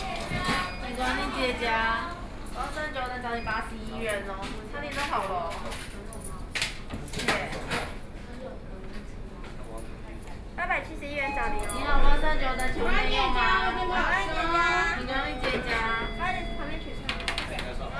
Guangming Rd., Beitou Dist. - In the restaurant
in the Yoshinoya, Ordering counter, Dialogue between high school students, Binaural recordings, Sony PCM D50 + Soundman OKM II
Taipei City, Taiwan, 3 November 2013